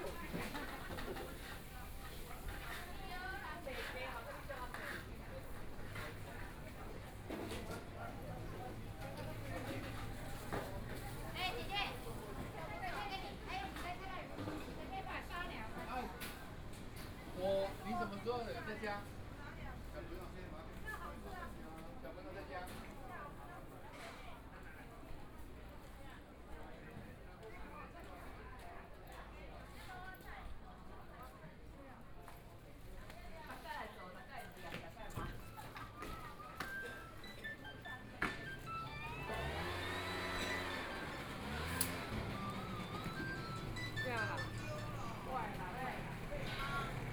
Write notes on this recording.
Walking through the market, Traffic Sound, Motorcycle Sound, Pedestrians on the road, Binaural recordings, Zoom H4n+ Soundman OKM II